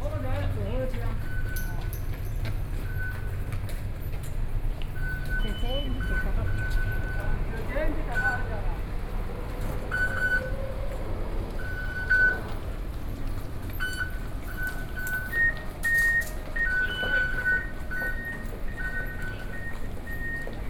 NTU Hospital station - MRT Station
9 October 2012, 16:13